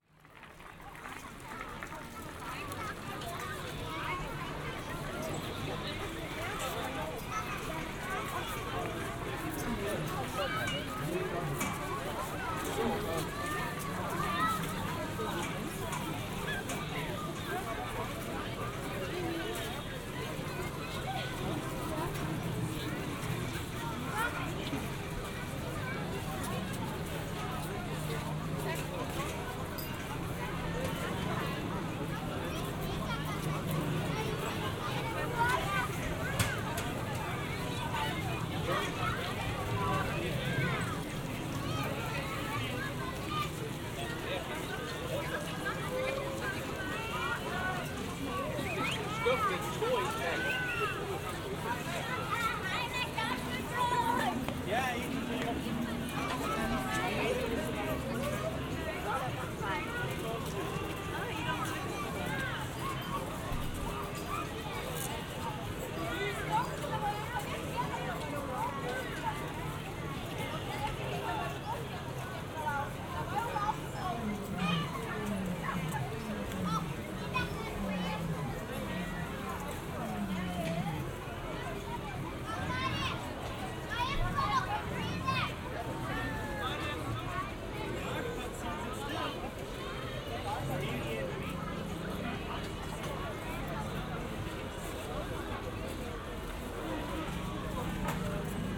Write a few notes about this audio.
Binaural listening with Sennheiser Ambeo smart headset